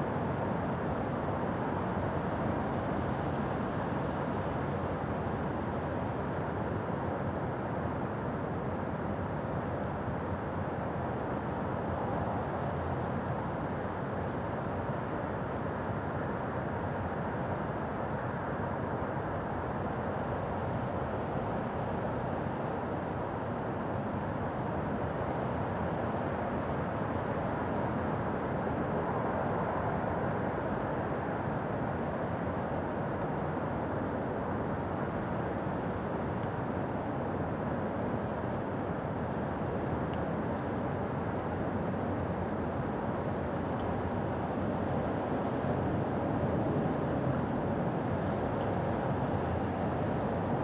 Kinsealy, Vent des de l Interior
Wind from Inside